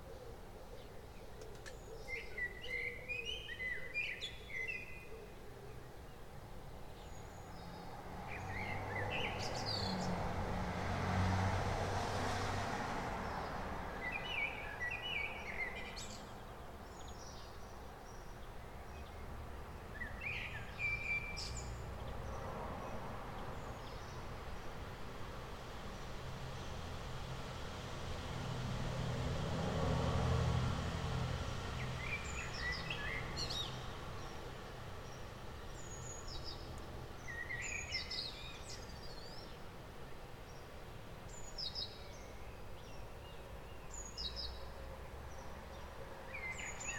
Rue Alphonse Daudet, Villeneuve-sur-Lot, France - Rec 20 04 20 07h35
mec suivant du 20/04/2020 à 07h35 effectué en XY à la fenêtre de mon studio micros DM8-C de chez Prodipe (dsl) XLR Didier Borloz convertisseur UAD Apollo 8 Daw Cubase 10 pro . Pas de traitement gain d'entrée +42Db . Eléments sonores entendus essentiellement des oiseaux quelques véhicules et des sons de voisinage
20 April 2020, 07:35